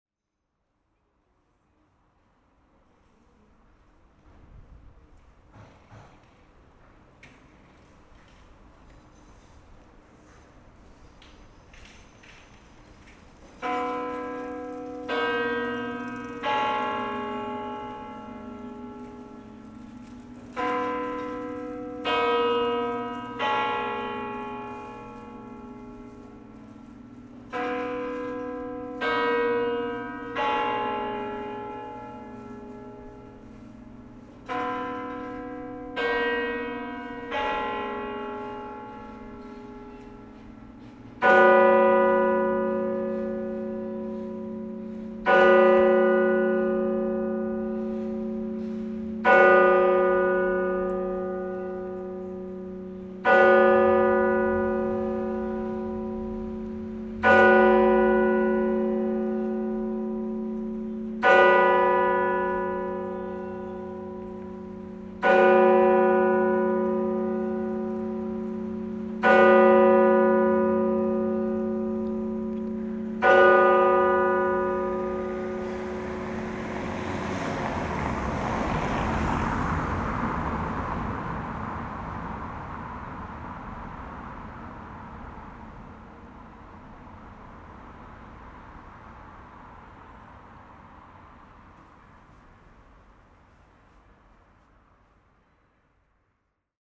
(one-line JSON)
{"title": "Troyes, France - Cathedral bells", "date": "2017-08-03 09:00:00", "description": "The cathedral bells ringing nine. These bells are heavy and old, but it's only an heap of terrible cauldrons. Linkage are completely dead, it's urgent to make works inside the bell tower. A sad bell ringing, this could be better.", "latitude": "48.30", "longitude": "4.08", "altitude": "110", "timezone": "Europe/Paris"}